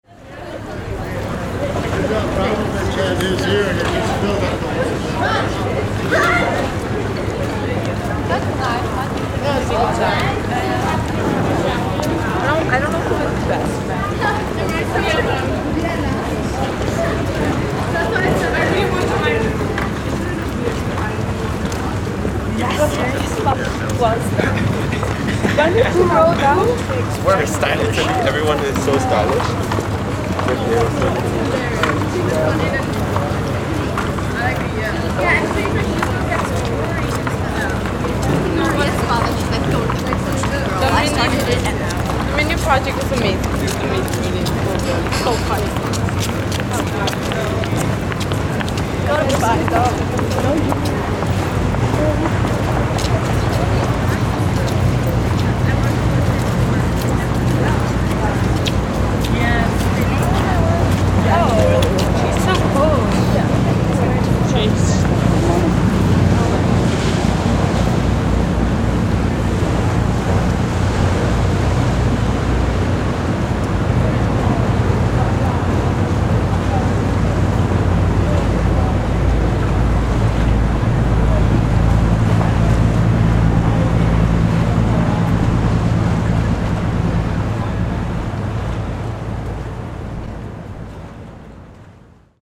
Castello, Venise, Italie - Tourists in Venezia
Tourists in Venezia, recorded with Zoom H6
Venice, Italy, 7 November 2013, 11:46